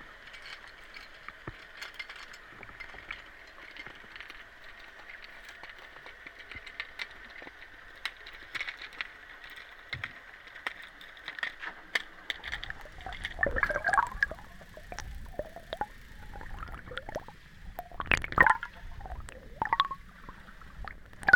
This recording was made with a hydrophone and H2n recorder on the Lower Niagara River, from a canoe not far from the shore off Navy Hall. The river is over a half-kilometer wide at that point and the depth of the river in the recording area probably about twelve meters, the hydrophone being lowered at varying depths. Powered leisure and recreational fishing boats are heard, their swells and the tinkling of a steel canoe anchor dragging over the rock bottom that did not work well in the river’s strong current. The Niagara River’s health has much improved in recent decades over it’s heavily degraded condition and its many fish species are safe to eat to varying degrees.